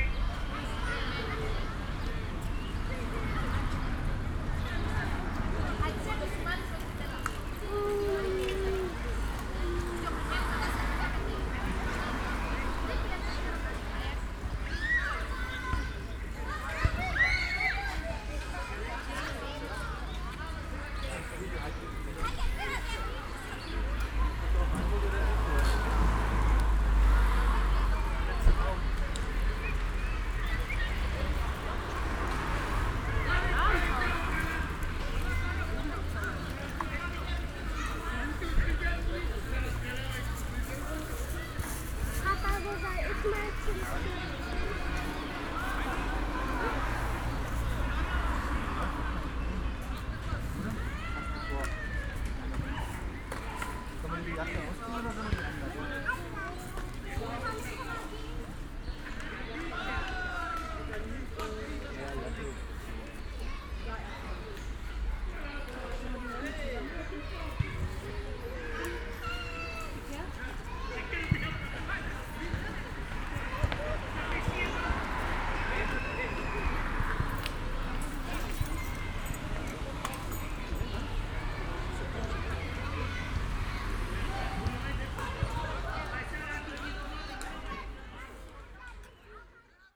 Treptower Str., Berlin - playground
playground ambience at Treptower Strasse. this recording is part of a sonic exploration of the area around the planned federal motorway A100.
(SD702 DPA4060)